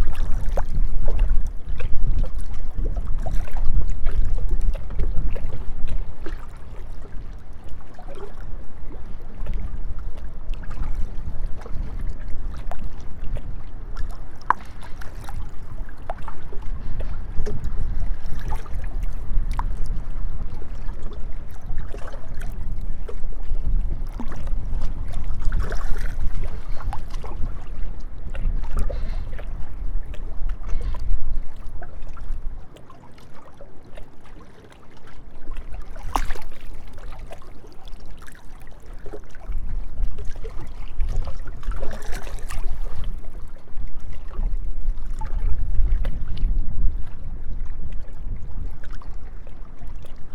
Recording of an atmosphere on a windy day from the perspective as close to the river as possible (excluding hydrophones options...). Easter Monday afternoon.
Recorded with Tascam DR100 MK3.
województwo małopolskie, Polska, 4 April 2021, 12:20